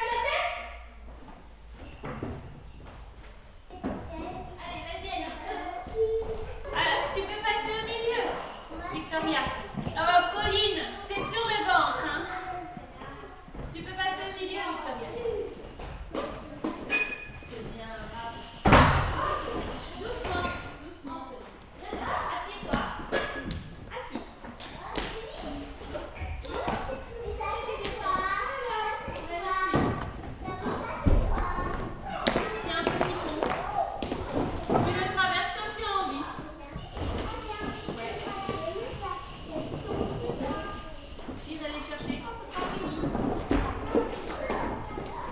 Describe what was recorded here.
Psychomotor education with very young child (3-4 years). They have to climb, to jump on pillows and run in hoops. It's difficult for them !